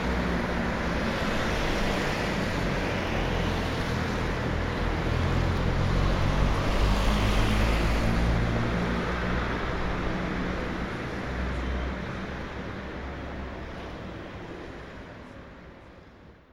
Limoges, Place Denis-Dussoubs, Talons aiguille
Dimanche matin dans la circulation...
Limoges, France